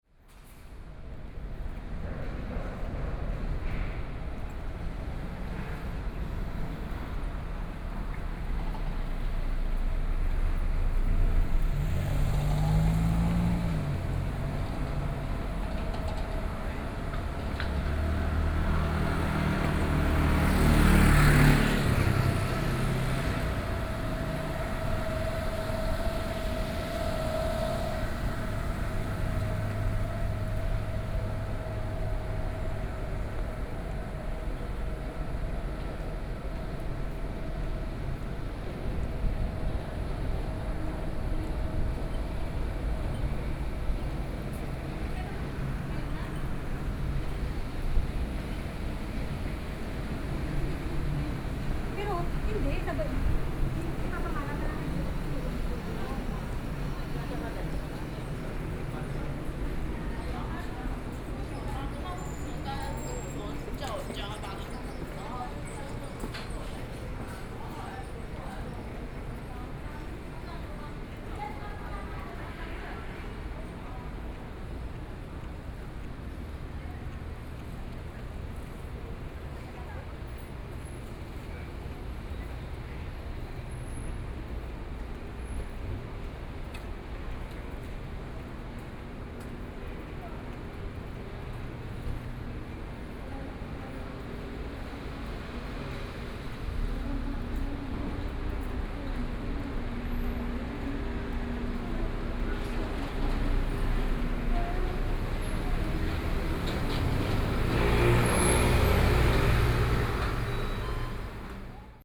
中山區晴光里, Taipei City - Walking in the alley
Walking in the alley, Environmental Noise, Site noise
Sony PCM D50+ Soundman OKM II
Taipei City, Taiwan, 27 April 2014, 10:58